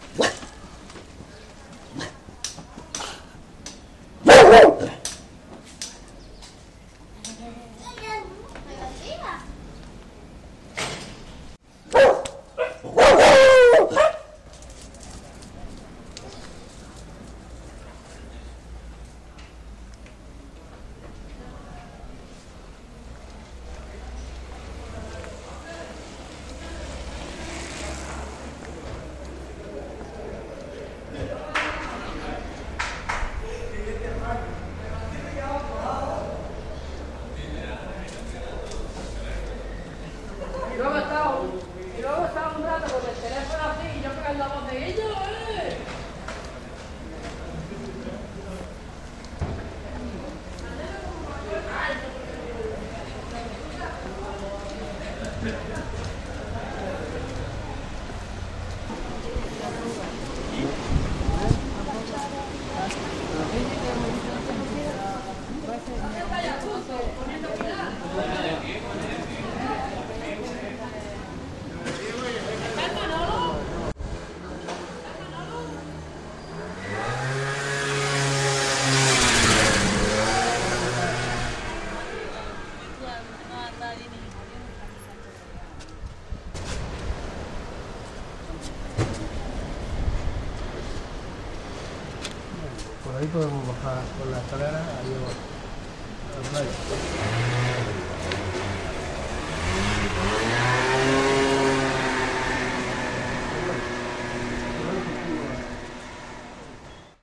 Field recording is one thing to do during siesta.
Beware of the dog!